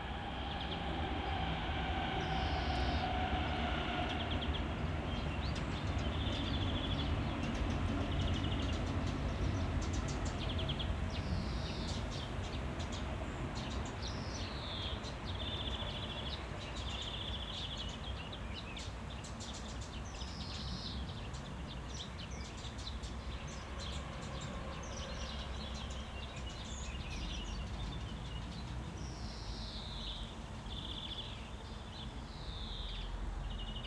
allotment, Treptow, Berlin - abandoned garden, plane crossing
Sonic exploration of areas affected by the planned federal motorway A100, Berlin.
(SD702, Audio Technica BP4025)
Berlin, Deutschland, European Union, 12 May 2013